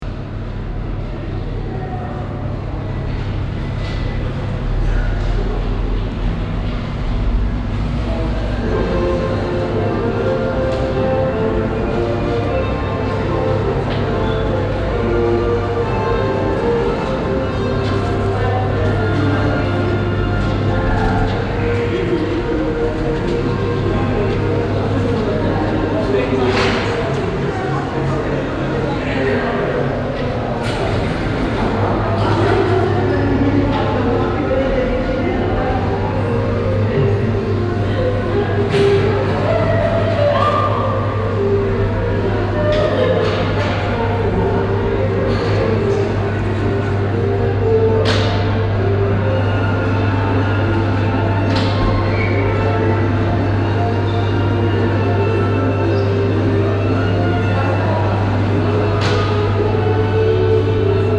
Central Area, Cluj-Napoca, Rumänien - Cluj, cinema Florin Piersic, foyer
Inside the cinema foyer. The sounds of a christmas movie advertisment
and barsounds from the attached restaurant mixing up in the reverbing stone and glass architecture with a deep ventilaltion hum.
international city scapes - topographic field recordings and social ambiences